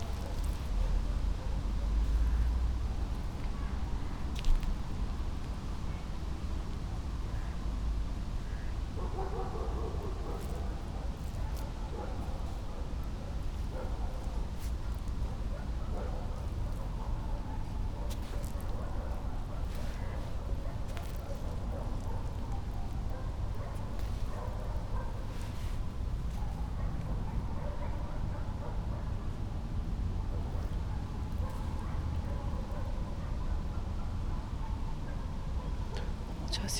Mariborski otok, river Drava, tiny sand bay under old trees - green waters, autumn voices, spoken words
Kamnica, Slovenia